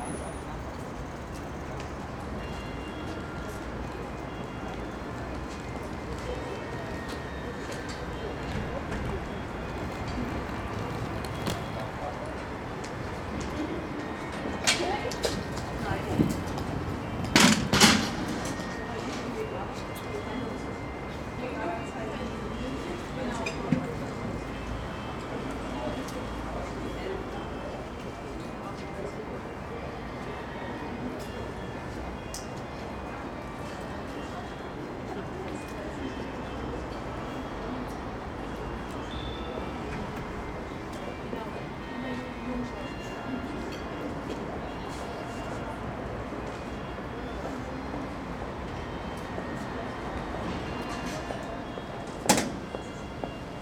schauspiel köln - vor der vorstellung, aussen / before the show, outside
menschen warten auf den beginn der vorstellung, 4711 glockenspiel im hintergrund
people waiting for the show, 4711 chime in the background